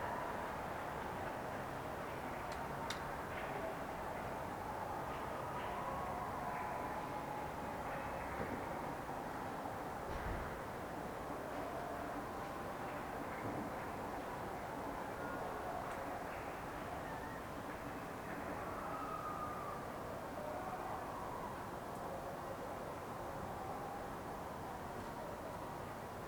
A social-media inspired event designed to encourage those working to support the elderly or ill during the Covid-19 pandemic in March 2020. Someone suggested that, at 8.00pm on 27th March, everyone should show their appreciation by cheering or clapping from their windows. The suggestion went viral online, and this was the result. This was recorded from a back garden and I think most people were cheering from their front windows, so the sound isn’t as clear as it should be. Recorded on a Zoom H1n.